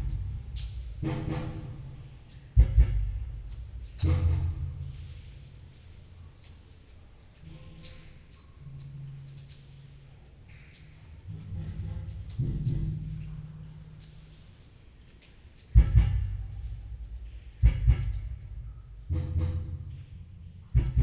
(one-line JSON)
{"title": "Valenciennes, France - Sewers soundscape", "date": "2018-12-24 11:00:00", "description": "Sounds of the manholes, into the Valenciennes sewers. The traffic circle makes some redundant impacts.", "latitude": "50.36", "longitude": "3.53", "altitude": "33", "timezone": "Europe/Paris"}